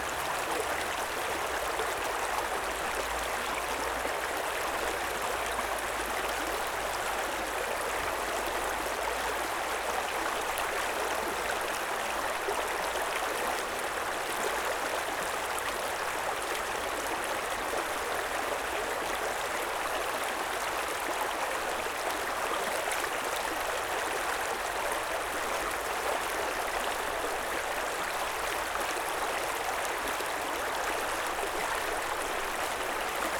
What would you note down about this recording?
This is recorded on the bank of the small Merri Creek, running through Northcote. Clear sunny spring day, there is a moment where the train squeeks around the bend, travelling slowly between stops. Recorded using Zoom H4n, standard stereo mics.